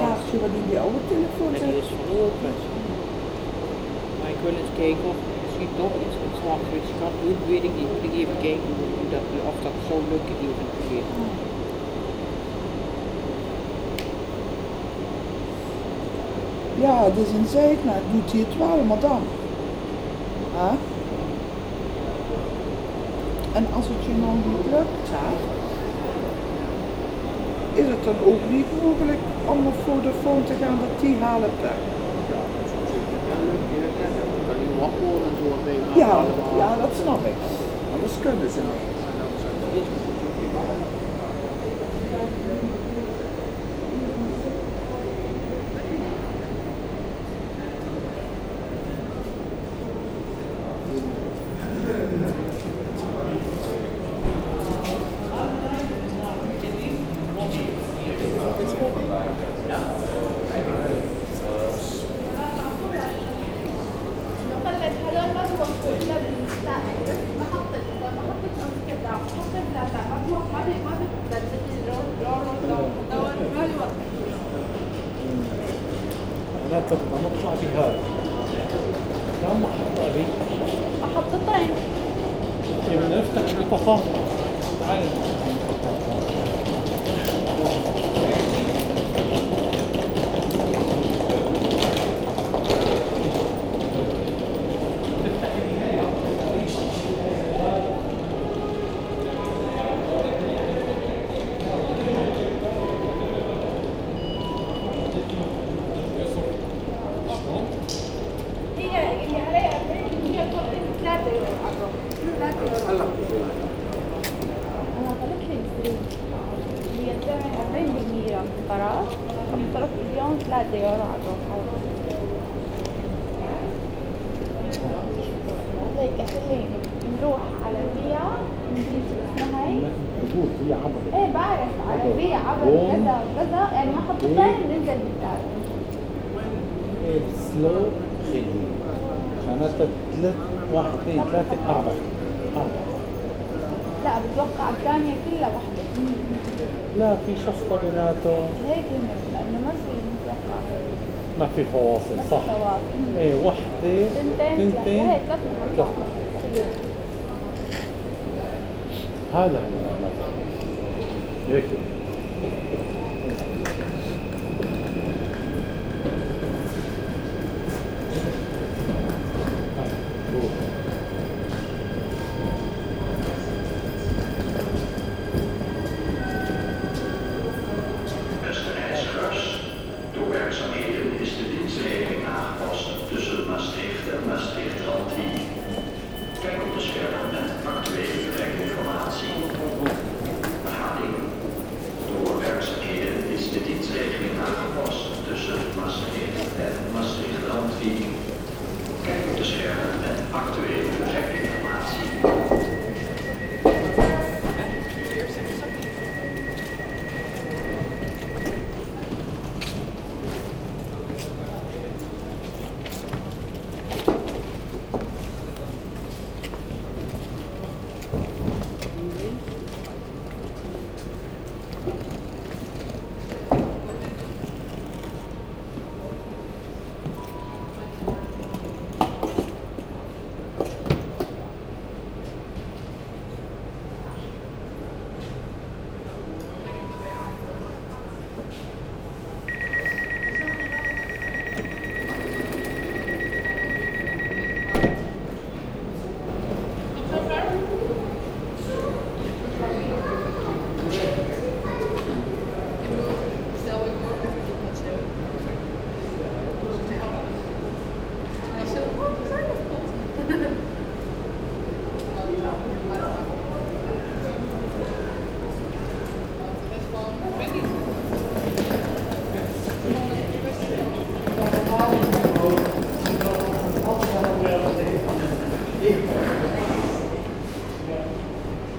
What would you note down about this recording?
Into the Maastricht station, a train is going to Heerlen. It's a small local train. People are waiting, embarking, the door closes and the train leaves.